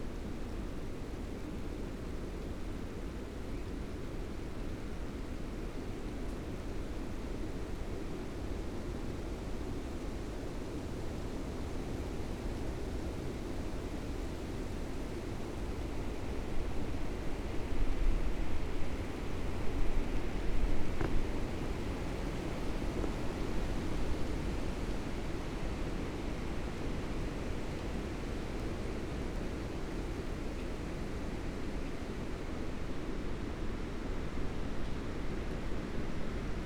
stormy afternoon, squeaking tree, some rain
the city, the country & me: january 2, 2015
Bad Freienwalde (Oder), Germany, January 2015